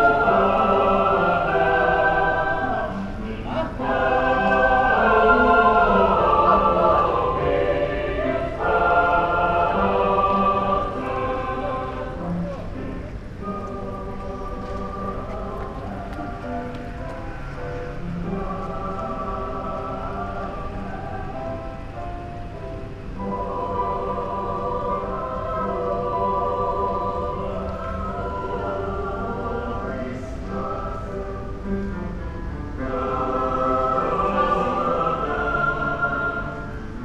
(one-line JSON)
{"title": "windows open, Franciscan Monastery, Maribor - choir", "date": "2014-06-27 20:45:00", "description": "evening ambience, rehearsal", "latitude": "46.56", "longitude": "15.65", "altitude": "275", "timezone": "Europe/Ljubljana"}